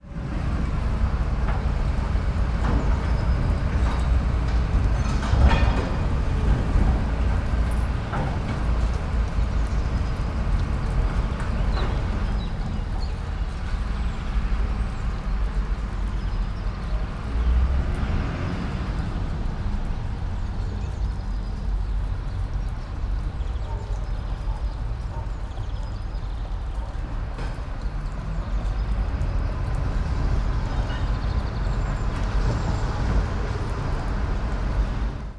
all the mornings of the ... - mar 19 2013 tue